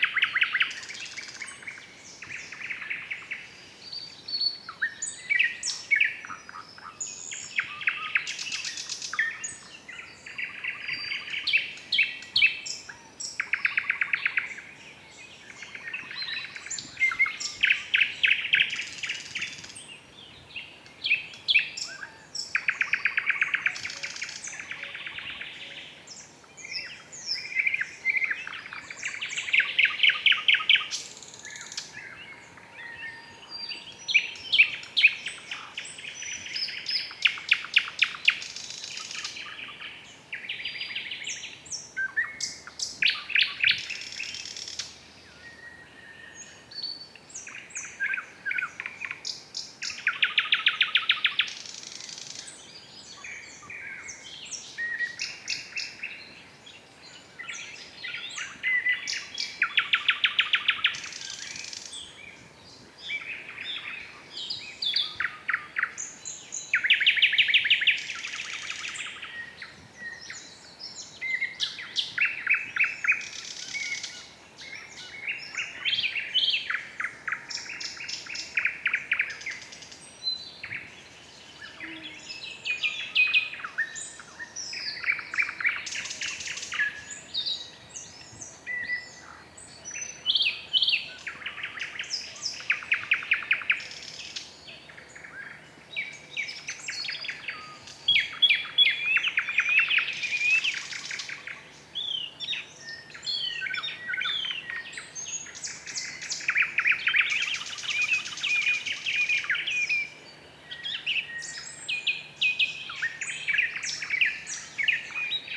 An evening concert of birds on the river Bug. Recorded with Roland R-26.